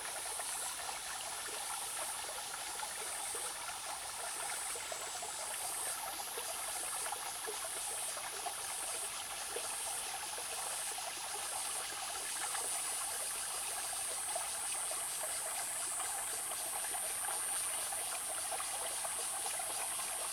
種瓜坑溪, 成功里 - In the middle of a small stream
Small streams, In the middle of a small stream
Zoom H2n MS+ XY+Spatial audio
Nantou County, Taiwan